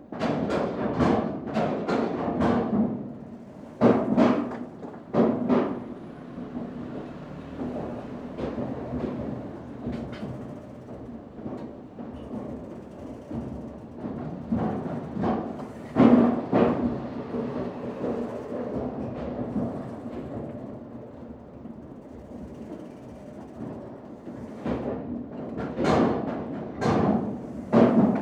Gdańsk, Poland - Most / Bridge 3
Most / Bridge 3 rec. Rafał Kołacki
8 June 2015, ~12:00